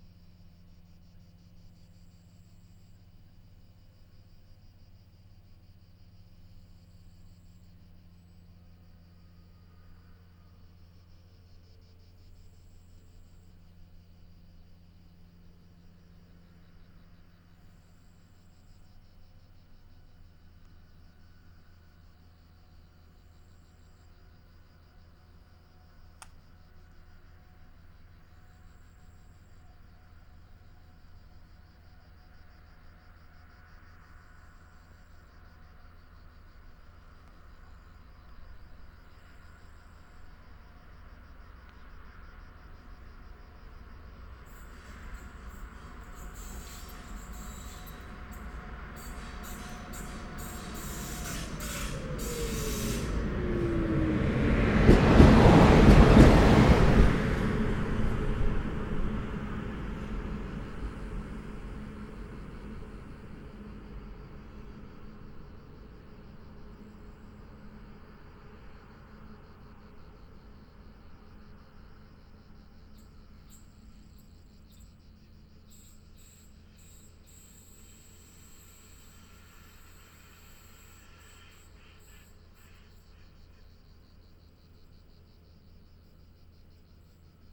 Electric locomotive and and electric passenger train. Recorded with Lom Usi Pro.